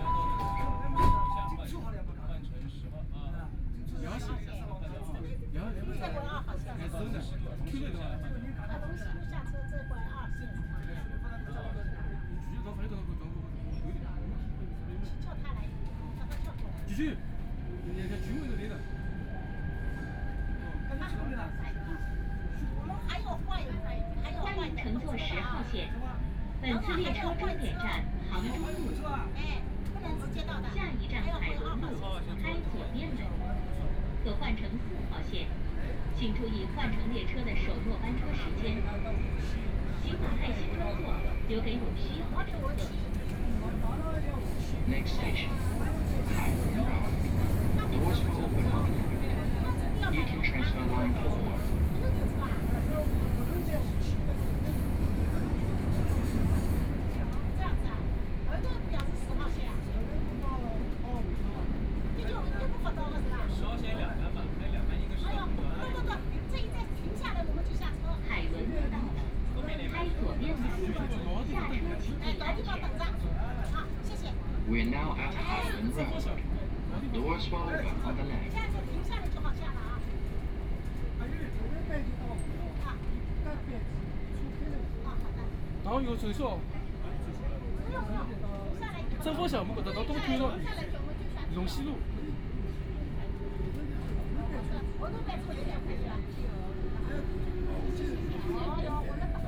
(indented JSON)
{
  "title": "Hongkou District, Shanghai - Line 10 (Shanghai Metro)",
  "date": "2013-11-25 13:03:00",
  "description": "from Siping Road station to Hailun Road station, Binaural recording, Zoom H6+ Soundman OKM II",
  "latitude": "31.26",
  "longitude": "121.49",
  "altitude": "16",
  "timezone": "Asia/Shanghai"
}